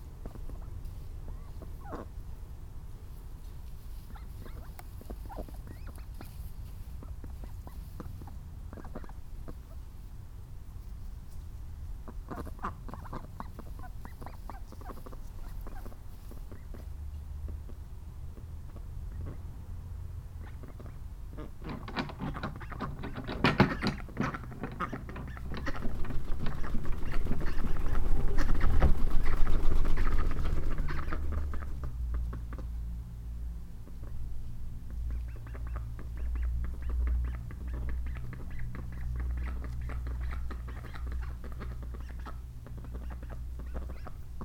The new duck pond, Reading, UK - The morning duck ritual
We recently got three lovely Khaki Campbell ducks - my favourite breed - and installed them in an enclosure with a pond and the duck house that my family bought me for my birthday. Now each morning starts with the nice ritual of opening up the duck house, cleaning their food bowl and replacing the food in it, cleaning their water dish and tidying up the straw in their duck house. While I do these simple care things for the ducks they flap and quack and make a noise, as they do not really enjoy human interference in their duck lives. I love the duck buddies already, and especially the wonderful sounds they bring into our lives. Hopefully one day soon there will also be some eggs...
11 August 2015